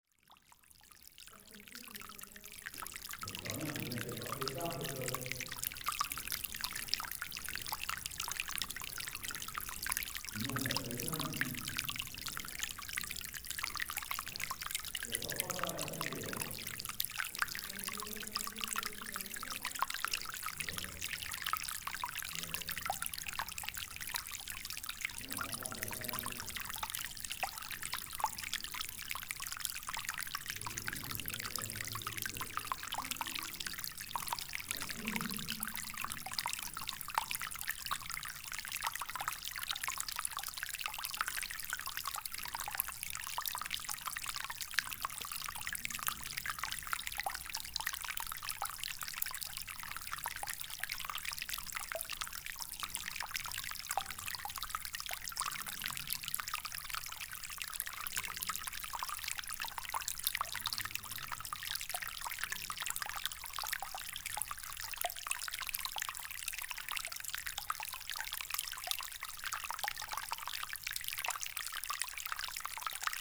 Largentière, France - Small stream
In an underground silver mine, a small stream in a sloping tunel.